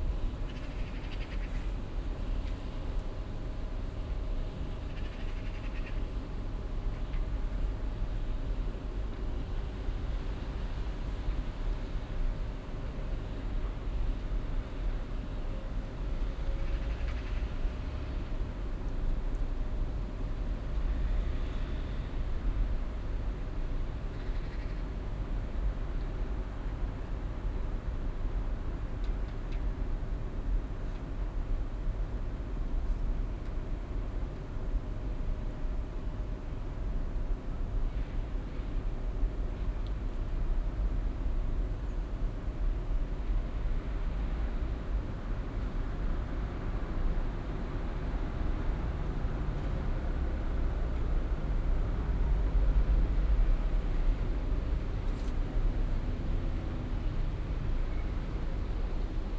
kleine nebengasse am sonntag nachmittag im herbstwind, blättern fliegen und rascheln, wenige passanten, die lüftung der tiefgarage
soundmap nrw
social ambiences/ listen to the people - in & outdoor nearfield recordings
kolpinghaus, garagenzufahrt